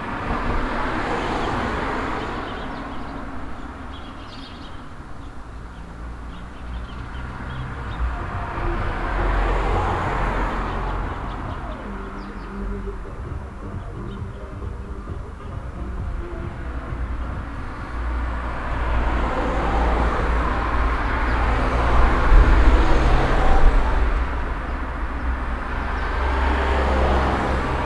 {"title": "hosingen, haaptstrooss, traffic", "date": "2011-09-13 12:05:00", "description": "Street traffic in the center of the village recorded on a windy summer evening nearby a tree with a bird-nest of young sparrows. Music from a car radio.\nHosingen, Haaptstrooss, Verkehr\nStraßenverkehr im Ortszentrum, aufgenommen an einem windigen Sommerabend nahe einem Baum mit einem Vogelnest mit jungen Spatzen. Musik von einem Autoradio.\nHosingen, Haaptstrooss, trafic\nBruit du trafic dans le centre du village enregistré un soir d’été venteux a proximité d’un arbre avec un nid de jeunes moineaux. On entend la musique d’un autoradio.", "latitude": "50.02", "longitude": "6.09", "altitude": "504", "timezone": "Europe/Luxembourg"}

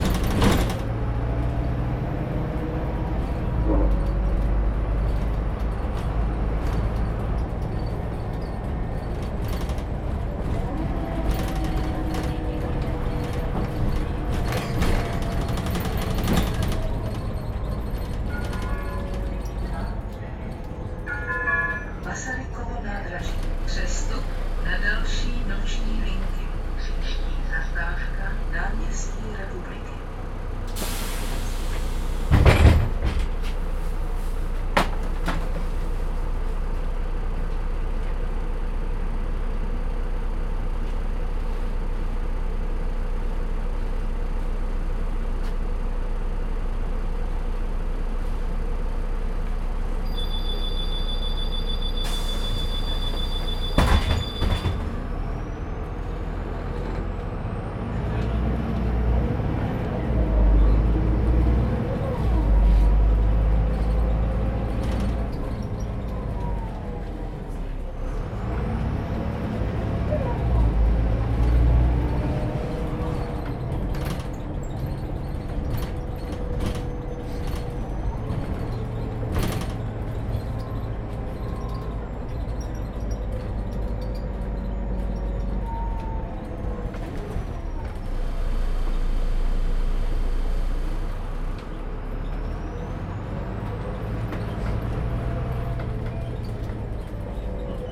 {"title": "Masarykovo nádraží, Praha-Nové Město, Czechia - On a night bus 911", "date": "2018-12-19 03:12:00", "description": "A ride on a night bus, rattling sounds, closing door signal, next stop announcement\nRecorded on Zoom H2n, 2 channel stereo mode", "latitude": "50.09", "longitude": "14.43", "altitude": "193", "timezone": "Europe/Prague"}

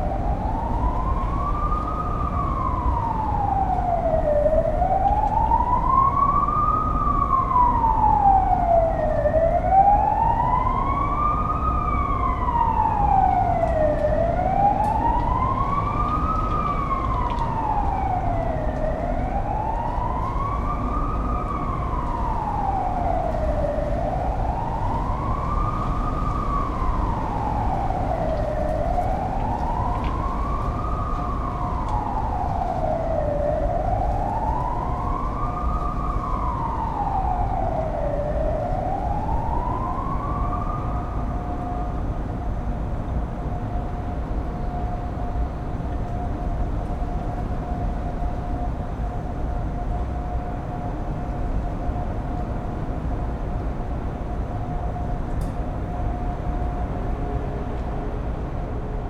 {"title": "Brussels, Rue Capouillet, Balcony inner courtyard. - Brussels, Rue Capouillet, Sirens and wind", "date": "2012-01-05 08:36:00", "description": "in the backyard, on a windy day.\nPCM-M10, internal microphones.", "latitude": "50.83", "longitude": "4.35", "altitude": "65", "timezone": "Europe/Brussels"}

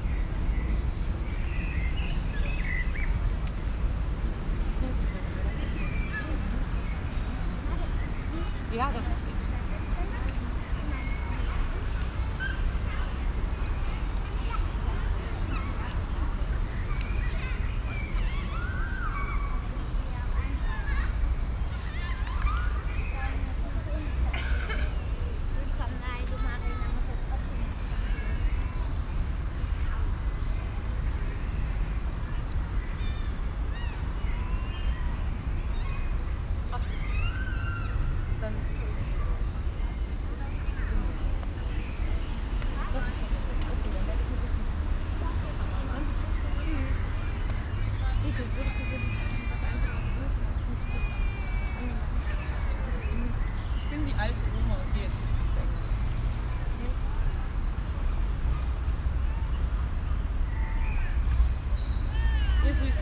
{"title": "cologne, stadtgarten, vordere wiese, nachmittags", "date": "2008-06-11 21:43:00", "description": "auf vorderer parkwiese, nahe biergarten, nachmittags\nproject: klang raum garten/ sound in public spaces - in & outdoor nearfield recordings", "latitude": "50.94", "longitude": "6.94", "altitude": "52", "timezone": "Europe/Berlin"}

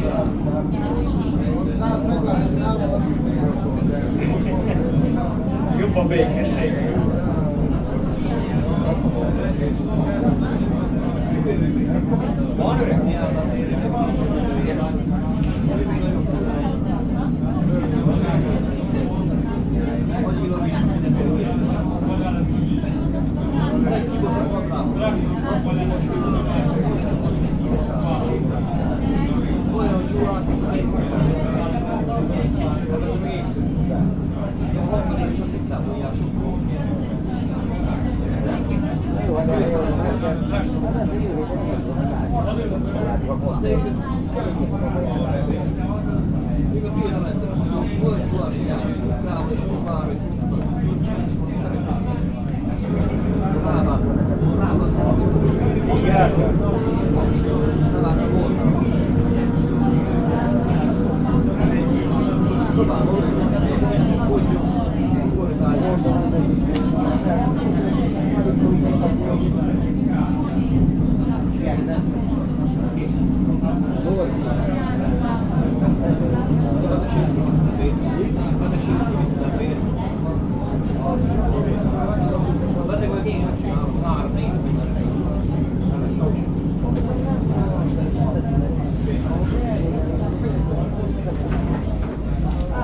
{"title": "somewhere between Chop and Uzhhorod - Transcarpathian elektrichka ride", "date": "2012-12-01 17:45:00", "description": "Taking a regional commuter train from Uzhgorod to Chop", "latitude": "48.47", "longitude": "22.23", "altitude": "99", "timezone": "Europe/Uzhgorod"}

